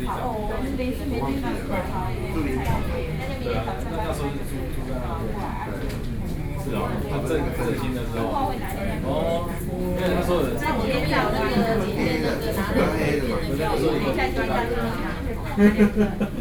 台大醫院, 中正區, Taipei City - in the hospital
In the hospital, Outside the operating room, Waiting and conversation, (Sound and Taiwan -Taiwan SoundMap project/SoundMap20121129-5), Binaural recordings, Sony PCM D50 + Soundman OKM II